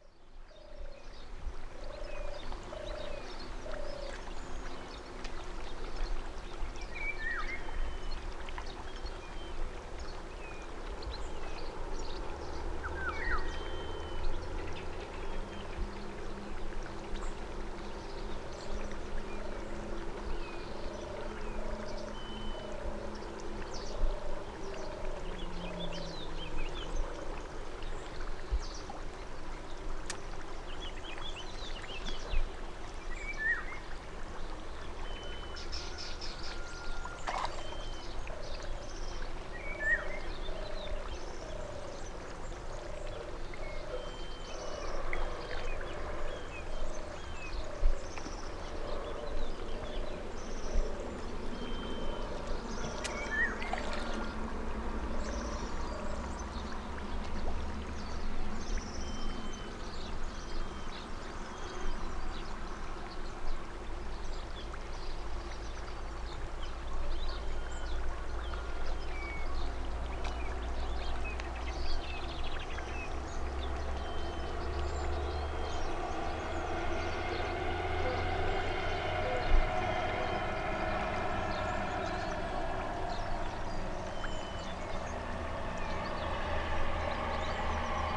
Nagozelo do Douro, Portugal - Manha ao lado do Douro, Nagozelo
Manha em Nagozelo do Douro. Mapa Sonoro do Rio Douro. Morning next to the Douro river in Nagozelo do Douro. Douro River Sound Map
8 August 2010